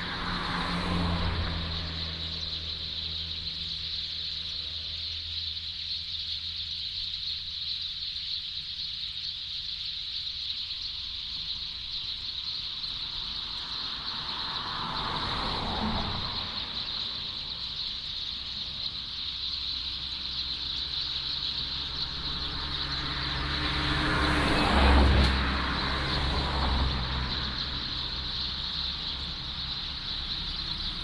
樂德公路, Yuli Township - Birdsong
Birdsong, Traffic Sound, Next to the Agricultural land